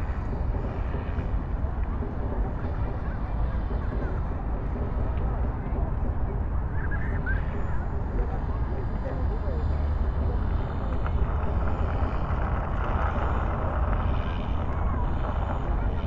Berlin Tempelhof West - city hum south east
microfones turned 180°, more direct noise from the autobahn, also pedestrians, bikes, kites etc.